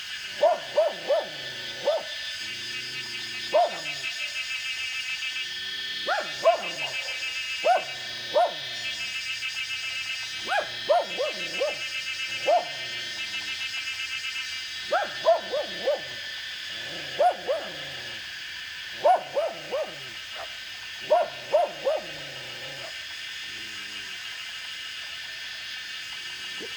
中路坑, 桃米里 - Cicada and Dogs
Cicada sounds, Dogs barking
Zoom H2n MS+XY